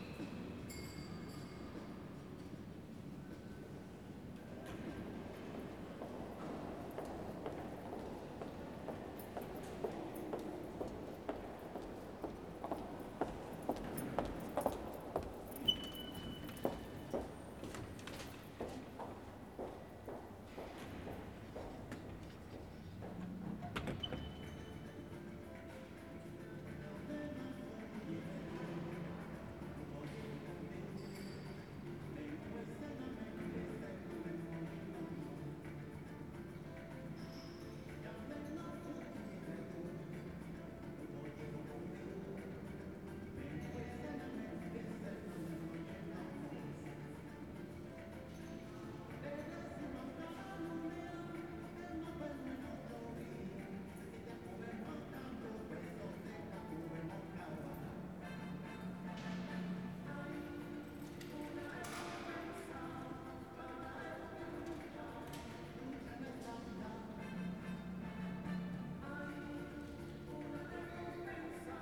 Vienna, Haus der Musik
In the courtyard of the house of music.